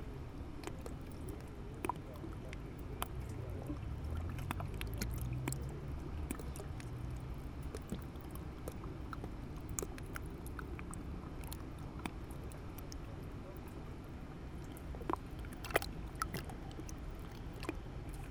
Rixensart, Belgique - Lapping on the lake
Small lapping on the surface of the Genval lake.